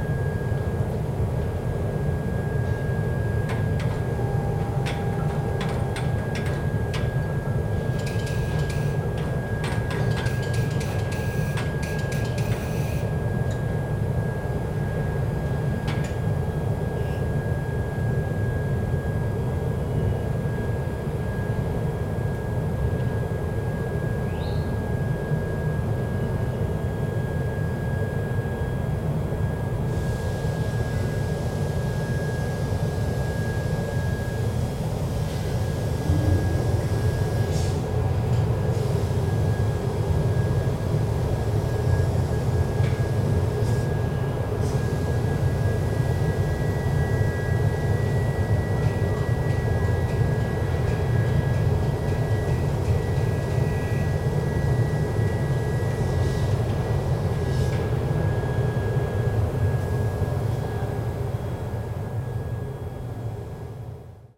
langenfeld, industriestrasse, stahl schmees
industrie - aufnahme in fabrik für stahlerzeugung, schmees - hier generelle atmo
soundmap nrw/ sound in public spaces - in & outdoor nearfield recordings